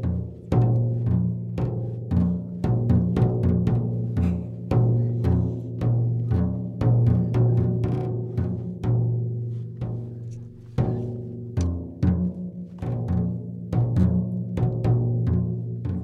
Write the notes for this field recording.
trommelworkshop mit kindern im projektraum des medienprojektes "bild + ton"